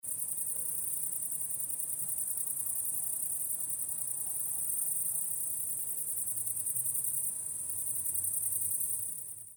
Martinovo Selo, Grobnik, crickets-meadow
Crickets and meadow sounds, Summer time.
recording setup:omni, Marantz PMD 620 - portable SD/SDHC card recorder